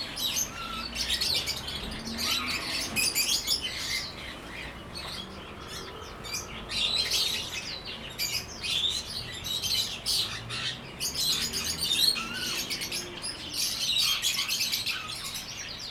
Sec., Bade Rd., Songshan Dist. - Bird Shop
Bird Shop, Traffic Sound
Zoom H2n MS + XY
Taipei City, Taiwan, 23 September 2014, ~13:00